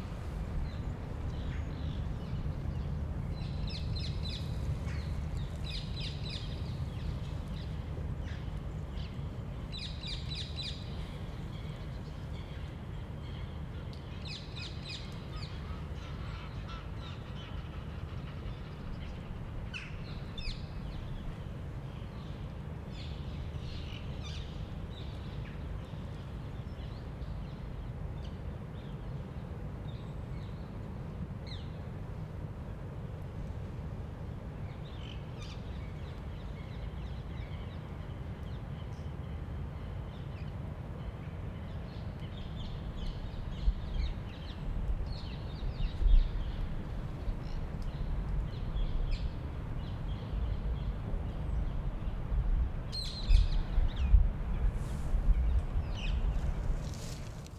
Kievitslaan, Rotterdam, Netherlands - Birds
A few birds on a sunny winter Sunday. Recorded with zoom H8